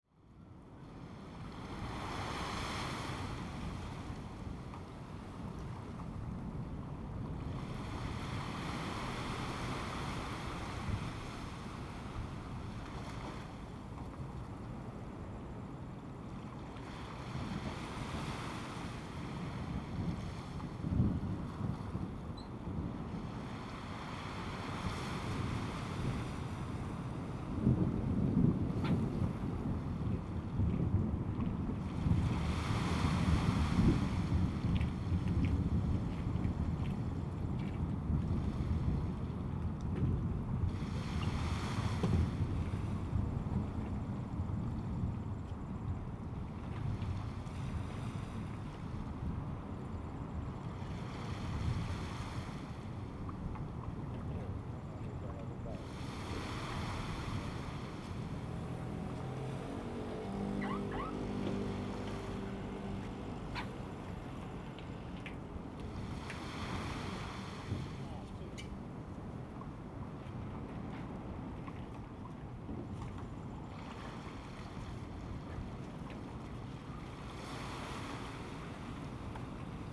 烏石鼻漁港, Taiwan - in the Fishing pier
Sound of the waves, in the Fishing pier, Thunder sound
Zoom H2n MS +XY